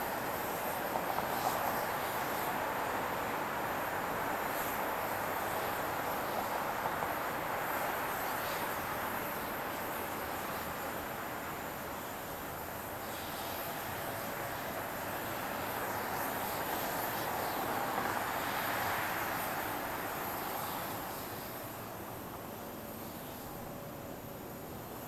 MSP Airport Terminal 1 Ramp - Minneapolis/St Paul International Airport Runway 30L Operations
Landings and takeoffs from Runway 30L at Minneapolis/St Paul International Airport recorded from the top of Terminal 1 Parking ramp. The sounds of the airport ramp and the passenger vehicle traffic exiting the terminal can also be heard.
2 February 2022, 15:30